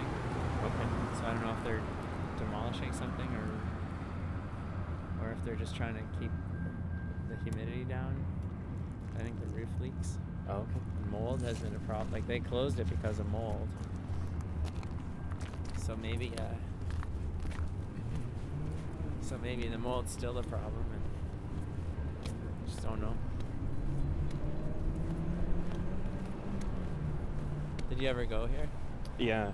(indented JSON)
{
  "title": "Downtown, Calgary, AB, Canada - King Eddy - Tear it down",
  "date": "2012-04-09 10:11:00",
  "description": "This is my Village\nTomas Jonsson",
  "latitude": "51.04",
  "longitude": "-114.05",
  "altitude": "1044",
  "timezone": "America/Edmonton"
}